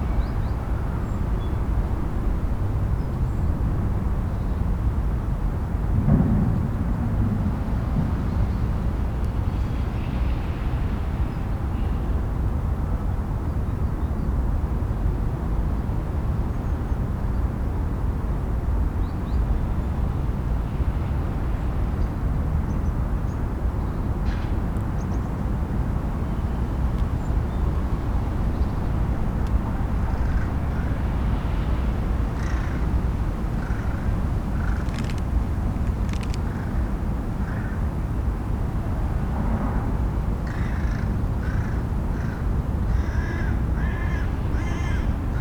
berlin: mergenthalerring - A100 - bauabschnitt 16 / federal motorway 100 - construction section 16: abandonned allotment
abandonned allotment (destroyed in february 2014)
sizzling noise of a reed screen fence, someone using an angle grinder, different birds, 2 local trains passing by and the distant drone of traffic
the motorway will pass the east side of this territory
the federal motorway 100 connects now the districts berlin mitte, charlottenburg-wilmersdorf, tempelhof-schöneberg and neukölln. the new section 16 shall link interchange neukölln with treptow and later with friedrichshain (section 17). the widening began in 2013 (originally planned for 2011) and shall be finished in 2017.
january 2014